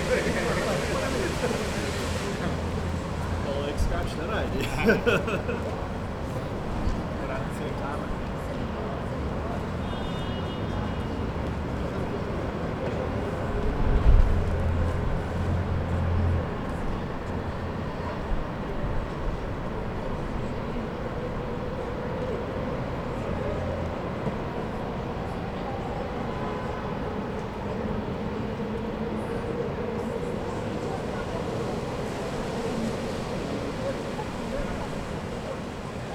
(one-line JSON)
{"title": "Alpha Ave, Burnaby, BC, Canada - Brentwood Plaza", "date": "2021-07-22 11:25:00", "latitude": "49.27", "longitude": "-123.00", "altitude": "48", "timezone": "America/Vancouver"}